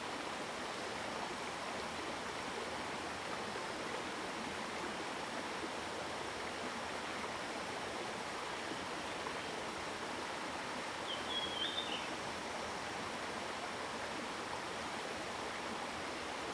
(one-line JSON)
{"title": "種瓜坑, 桃米里Puli Township - Streams and birds sound", "date": "2016-04-28 08:19:00", "description": "Streams and birds sound, Upstream region of streams\nZoom H2n MS+XY", "latitude": "23.93", "longitude": "120.90", "altitude": "650", "timezone": "Asia/Taipei"}